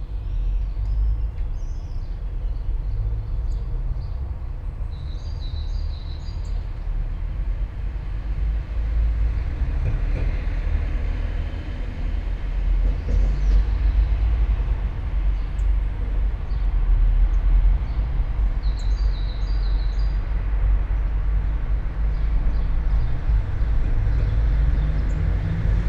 all the mornings of the ... - jun 12 2013 wednesday 06:19
Maribor, Slovenia